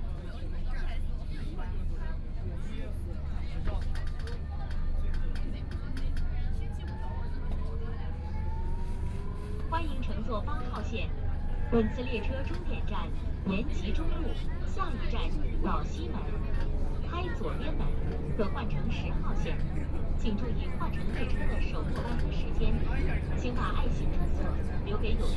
Huangpu District, Shanghai - Line 8 (Shanghai Metro)
From South Xizang Road Station to Laoximen station, Binaural recording, Zoom H6+ Soundman OKM II
Shanghai, China, 29 November 2013, ~16:00